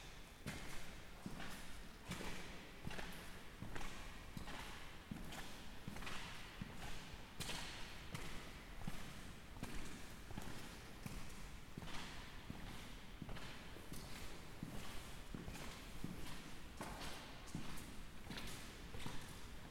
gehen durch den Ort von Poschiavo, die steinigen Häuser hallen, der italienische Flair des Graubündens tritt durch
Poschiavo, Switzerland